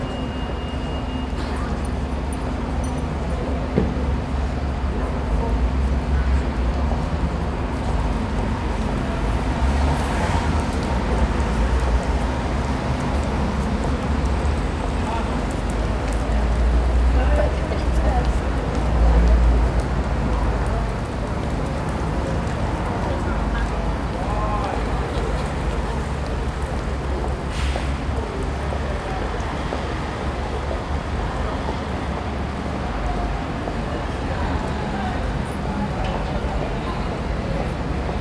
Salisbury, UK - 060 Empty market place
Guildhall Square, Salisbury, UK, 1 March, 12:46